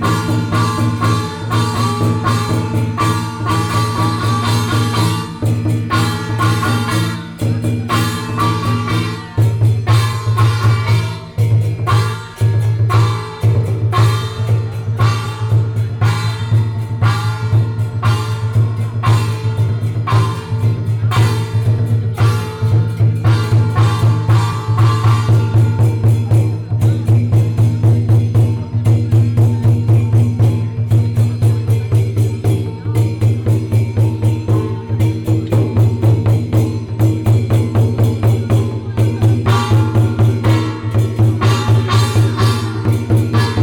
Dalongdong Baoan Temple, Taipei City - walking in the temple
walking in the temple